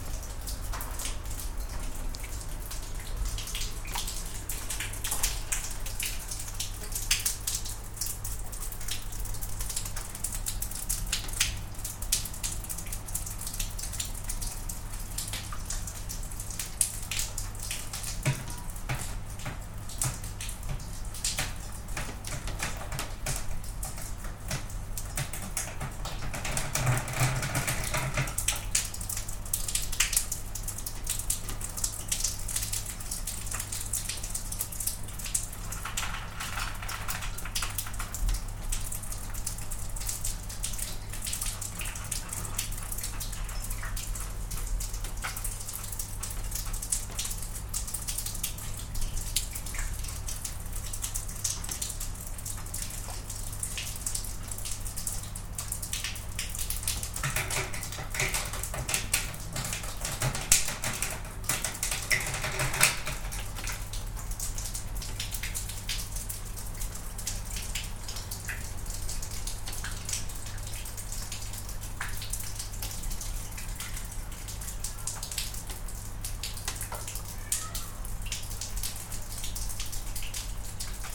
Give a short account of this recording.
Nice clear raining drops recording on the balcony. In the middle of recording, there are interesting narrow drops falling into the big plastic pot for watering the flowers.